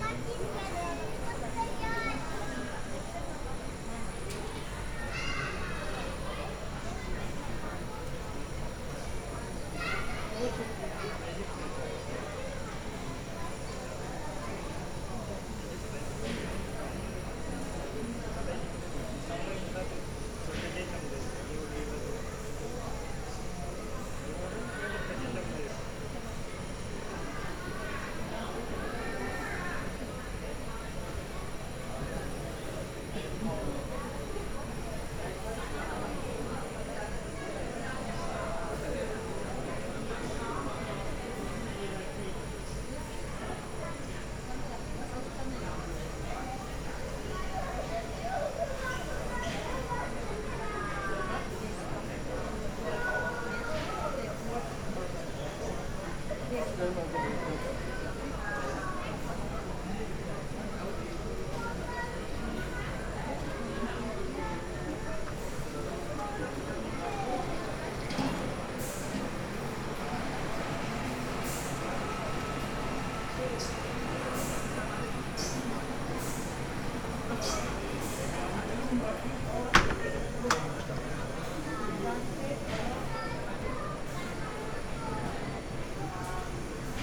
Köln, Schokoladenmuseum / chocolate museum, chocolate production for tourists, people waiting for sweets
(Sony PCM D50, Primo EM172)